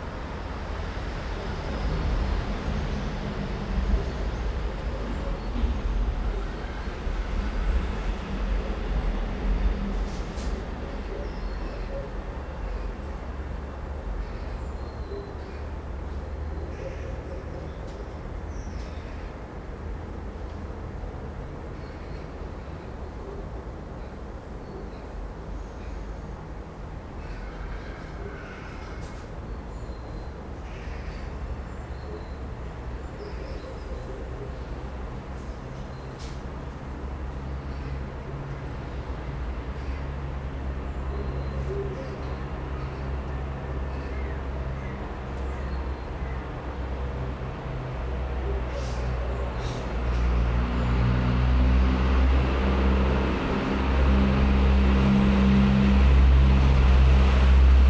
вулиця Петропавлівська, Київ, Украина - Morning in Kiev
Как просыпается спальный район столицы Украины